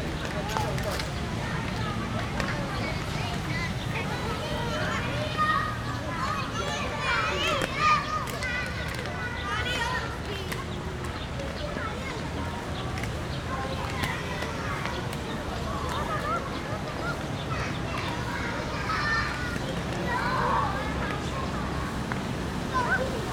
忠義廟, Luzhou Dist., New Taipei City - Children and birds singing
In the square in front of the temple, Children and birds singing
Rode NT4+Zoom H4n